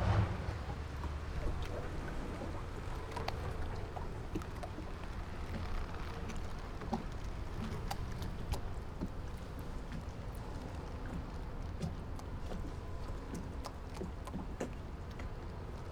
鐵線里, Magong City - Small pier
Small pier, The distance the sound of house demolition
Zoom H6 + Rode NT4
Penghu County, Magong City, 澎27鄉道, 23 October 2014